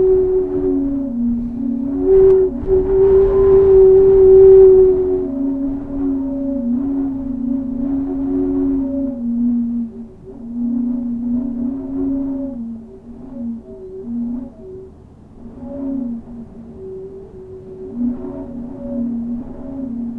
Court-St.-Étienne, Belgique - Le panneau qui chante
The very powerful wind this winter made a strange sound on a sign. The sign tube had 3 holes. The wind was playing music inside as it was a flute. All this is completely natural and it works only by very windy days !
Court-St.-Étienne, Belgium, 12 January